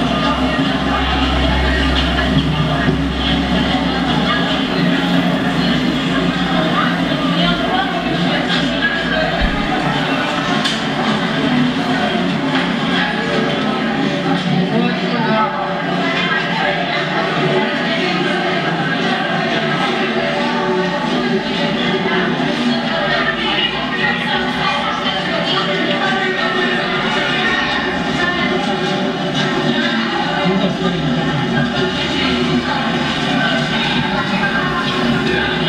Plumlovska, Sound Booth
Its site-specific sound instalation. Sounds of energic big cities inside bus stops and phone booths in small town.
Original sound record of Prague by
Jakub Jansa